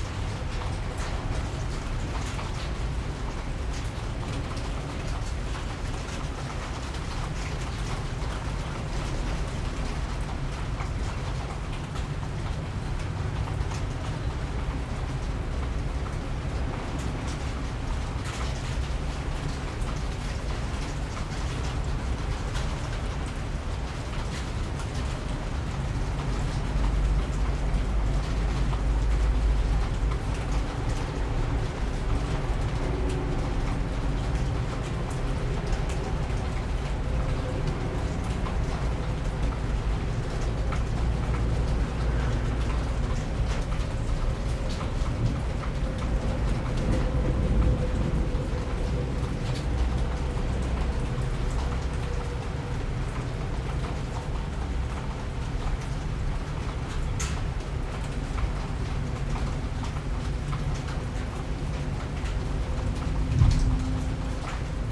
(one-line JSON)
{"title": "Blackland, Austin, TX, USA - Libra Full Moon Thunderstorm", "date": "2016-03-24 02:40:00", "description": "Recorded with a pair of DPA 4060s and a Marantz PMD661", "latitude": "30.28", "longitude": "-97.72", "altitude": "188", "timezone": "America/Chicago"}